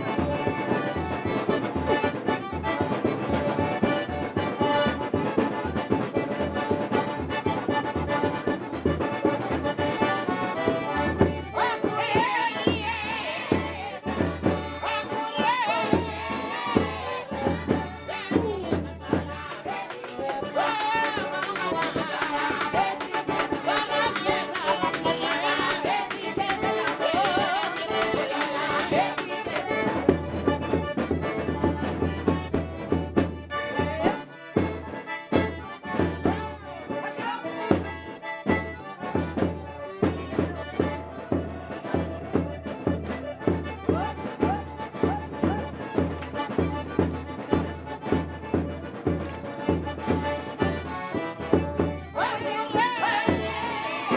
Madagasiraka-song ands dance by old palace. One dancer was dressed up like a turkey
Analamanga, Province d’Antananarivo, Madagascar, 20 December